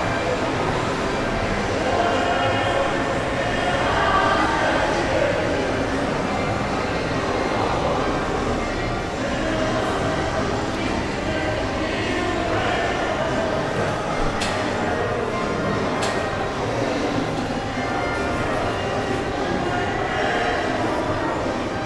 Se escucha personas hablando, el sonido de bus, el sonido de cosas siendo arrastradas, el viento, música.
Valle de Aburrá, Antioquia, Colombia, 5 September 2022